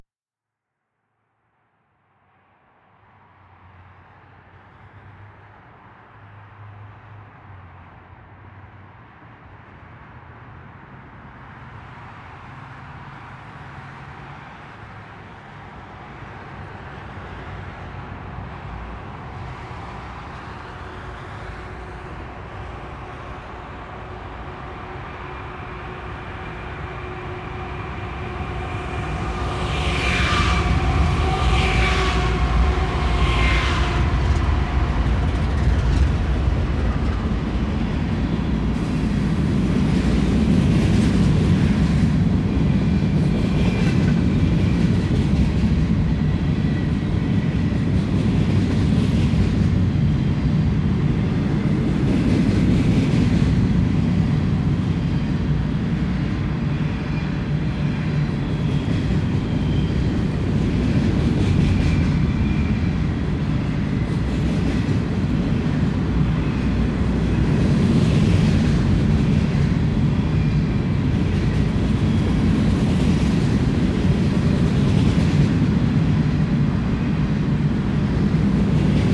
Recorded with a pair of DPA 4060s and a Marantz PMD661